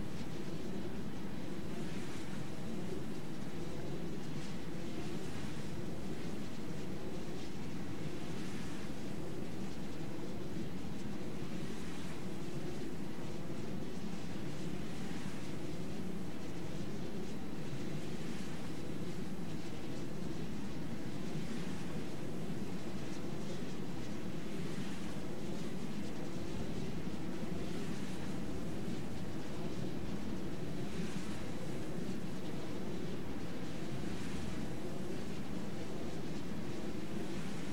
Est. Serra do Cume, Portugal - Windmills
The sound of the windmills, some cows uncomfortable with human presence and some crickets.